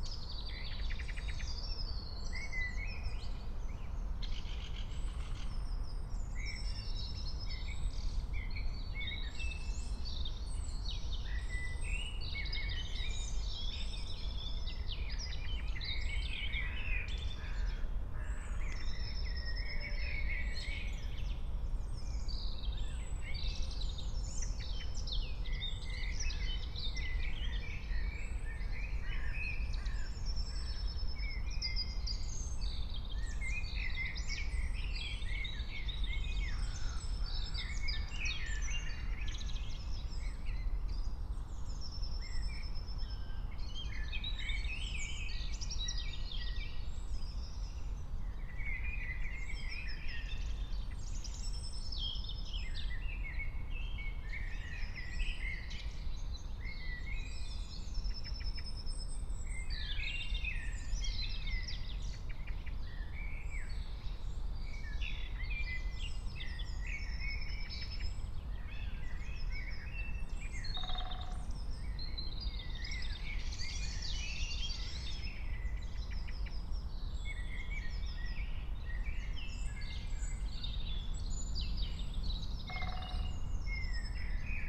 08:30 Berlin, Königsheide, Teich
(remote microphone: AOM 5024HDR/ IQAudio/ RasPi Zero/ 4G modem)